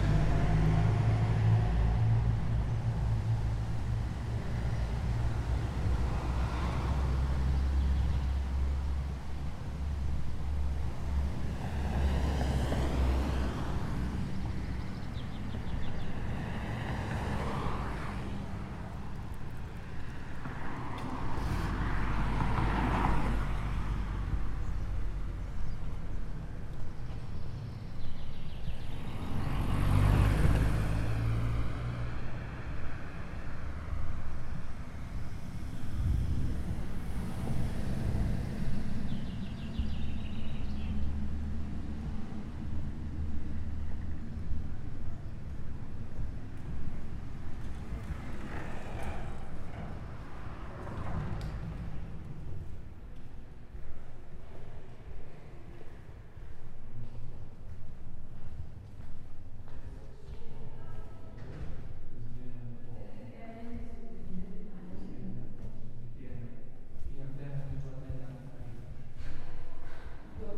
Srednja ekonomska in trgovska šola, Nova Gorica, Slovenija - Sprehod po srednji ekonomski in trgovski šoli
Corridor small talk.
Srednja ekonomska in trgovska šola Nova Gorica
Nova Gorica, Slovenia, 7 June 2017